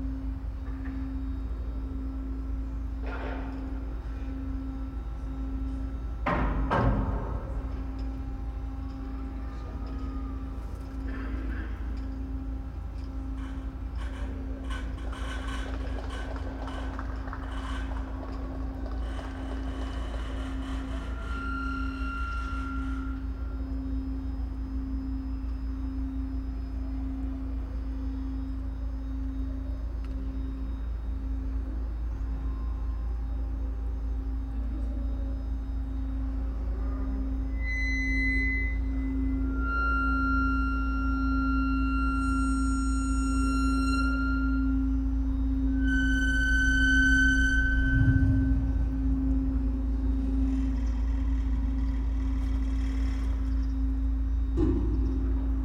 Quai du Platier, Paimpol, France - Passage écluse de Paimpol
Passage de l'écluse de Paimpol à bord d'un voilier, entrée au port. Enregistré avec un couple ORTF de Sennehiser MKH40 et une Sound Devices Mixpre3.